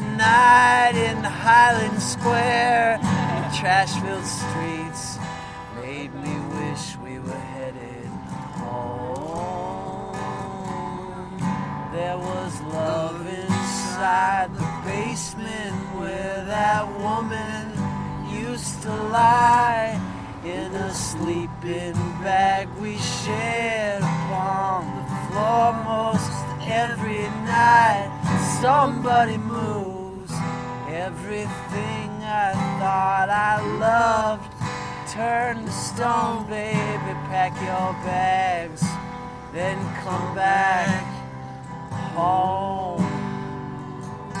{"title": "Angel Falls Coffee Shop, Highland Square, Akron OH - Buskers outside Angel Falls Coffee Shop", "date": "2017-05-14 12:23:00", "description": "A busker and friends busk and talk outside of Highland Square's Angel Falls on sunny afternoon in Akron. WARNING, the second song gets a bit loud in the middle.The sound was recorded using a Zoom Q3HD Handy Video Recorder and Flip mini tripod. The tripod was set on the ground.", "latitude": "41.10", "longitude": "-81.54", "altitude": "333", "timezone": "America/New_York"}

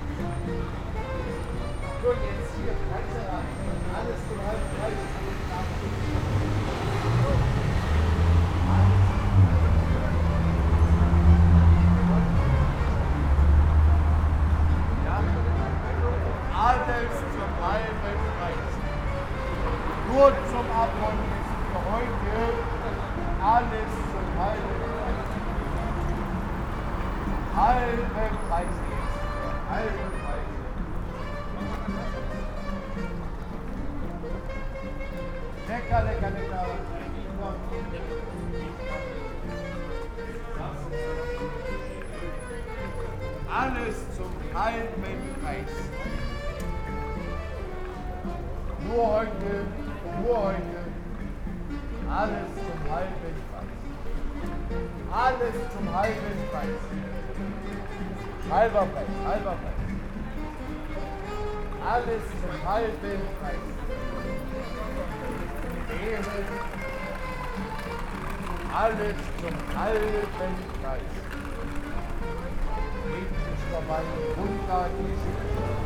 {"title": "Schönhauser Allee, Berlin, Deutschland - berlin groove schönhauser", "date": "2020-11-14 18:30:00", "description": "berlin_groove_schönhauser : it's around 6pm, the fruit seller in front of the Schönhauserarcaden at the transition to the U2 is praising his goods at a reduced price and starts to do so more and more in the groove of two wonderful street musicians (saxophone and guitar).", "latitude": "52.55", "longitude": "13.41", "altitude": "55", "timezone": "Europe/Berlin"}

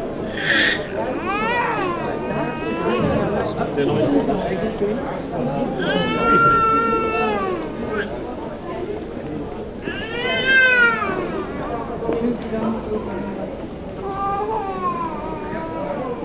{"title": "Maastricht, Pays-Bas - Commercial street", "date": "2018-10-20 12:10:00", "description": "People walking quietly in the very commercial street of Maastricht.", "latitude": "50.85", "longitude": "5.69", "altitude": "57", "timezone": "Europe/Amsterdam"}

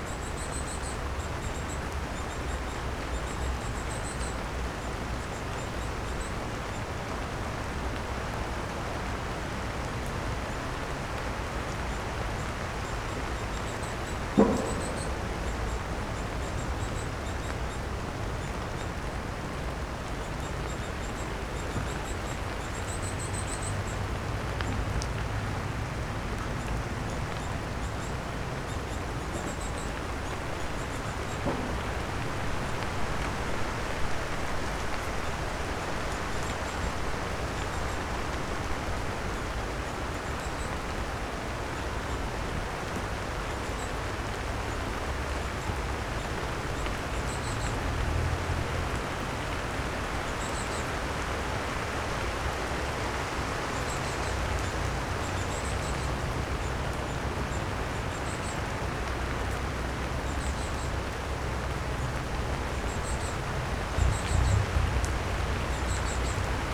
{"title": "Utena, Lithuania, it's raining under the lime-tree", "date": "2012-07-18 15:05:00", "description": "standing under the lime-tree and listening summer rain", "latitude": "55.51", "longitude": "25.60", "altitude": "108", "timezone": "Europe/Vilnius"}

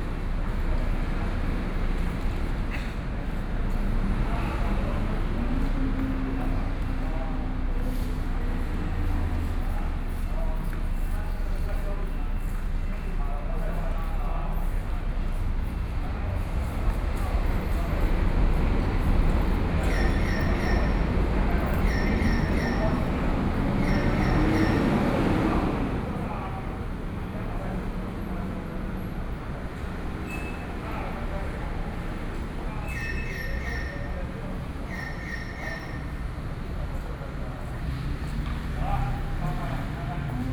{"title": "Neili Station, Taoyuan - Station hall", "date": "2013-09-16 13:24:00", "description": "Station hall, Broadcast station message, Sony PCM D50 + Soundman OKM II", "latitude": "24.97", "longitude": "121.26", "altitude": "126", "timezone": "Asia/Taipei"}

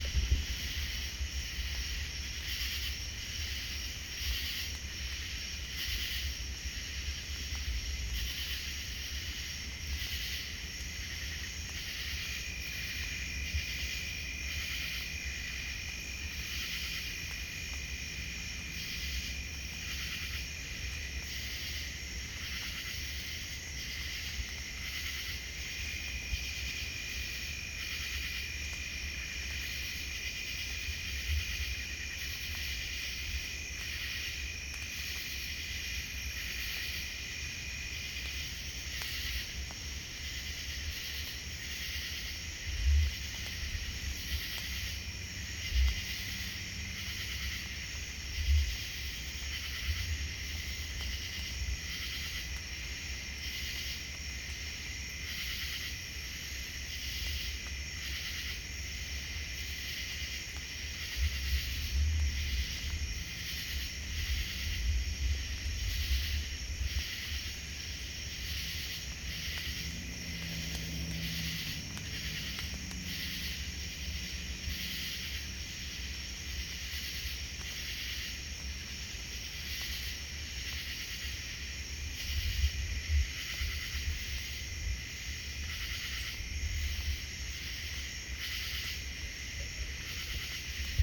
{"title": "A back yard in Chestnut Mountain, Georgia, USA - Cicadas, round midnight", "date": "2018-07-22 23:11:00", "description": "Cicadas and other night troubadours in the foothills of north Georgia", "latitude": "34.17", "longitude": "-83.80", "altitude": "283", "timezone": "America/New_York"}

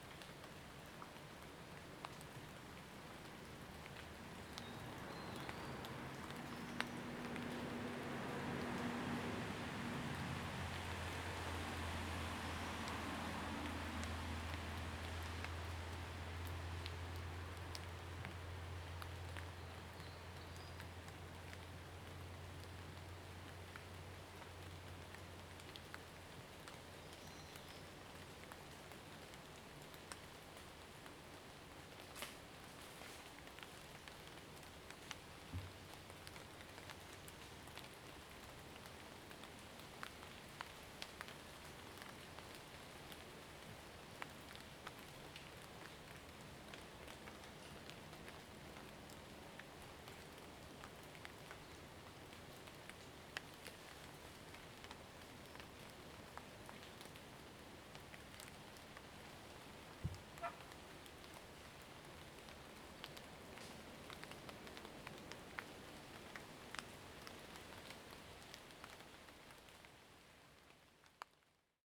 {"title": "水上巷, 埔里鎮桃米里, Nantou County - raindrop", "date": "2016-03-24 09:23:00", "description": "In the woods, raindrop\nZoom H2n MS+XY", "latitude": "23.94", "longitude": "120.92", "altitude": "597", "timezone": "Asia/Taipei"}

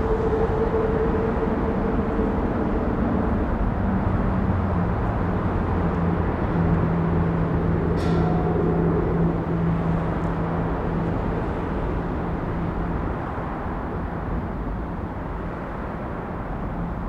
{"title": "On the Creek under 35W - Bridgesounds", "date": "2012-08-12 12:14:00", "description": "The recent bridge expansion over Minnehaha Creek, played by the traffic above, rings regularly and resonates deeply.", "latitude": "44.91", "longitude": "-93.27", "timezone": "America/Chicago"}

